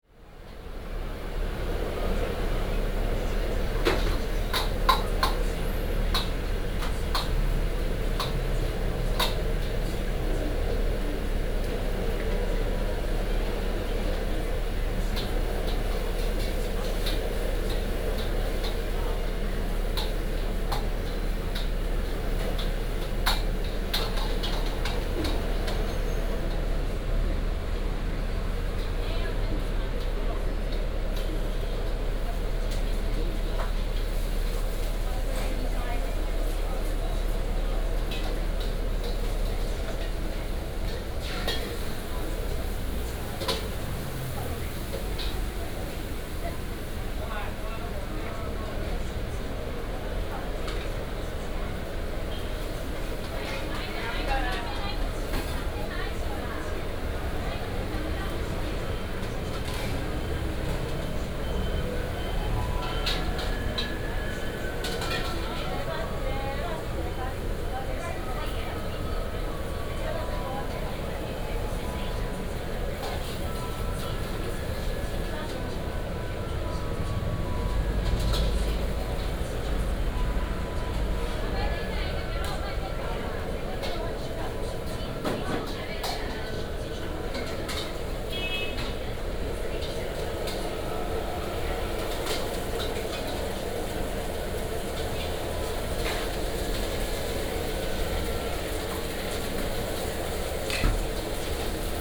炒麵專家, Datong Dist., Taipei City - Fried noodle shop
in the Fried noodle shop, Traffic sound